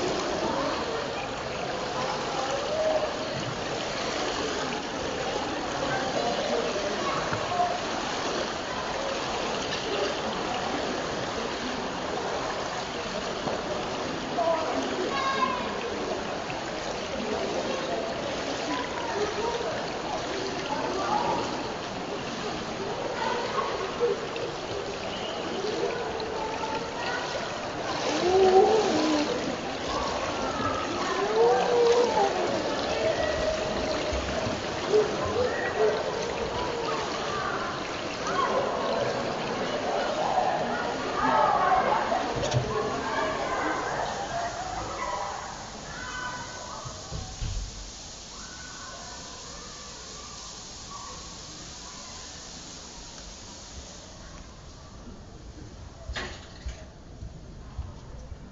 Air temperature outside: -3°C, air temperature inside 29°C, water temperature 27°C.
It makes you wanna move...